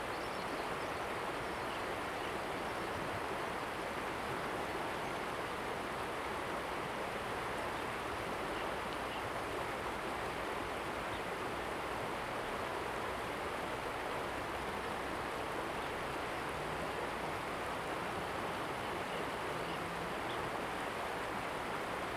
太麻里鄉金崙溪, Jinlun, Taimali Township - Stream sound
stream, On the embankment, Bird call
Zoom H2n MS+XY
1 April, ~16:00